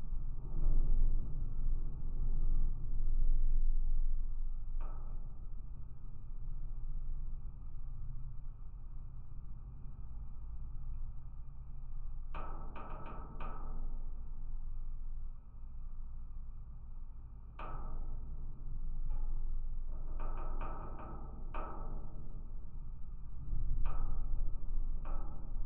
Rokiškis, Lithuania, large metallic doors
abandoned electrical substation. strong wind outside. contact microphones and LOM geophone on large metallic doors.
Panevėžio apskritis, Lietuva